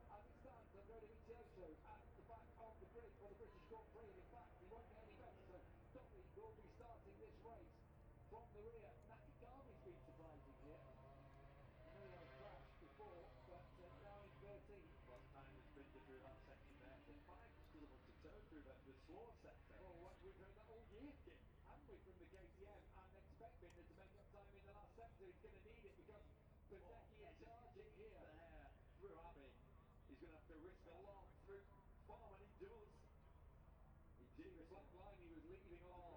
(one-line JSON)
{"title": "Silverstone Circuit, Towcester, UK - british motorcycle grand prix 2022 ... moto grand prix ... ...", "date": "2022-08-06 14:10:00", "description": "british motorcycle grand prix 2022 ... moto grand prix qualifying one ... outside of copse ... dpa 4060s clipped to bag to zoom h5 ...", "latitude": "52.08", "longitude": "-1.01", "altitude": "158", "timezone": "Europe/London"}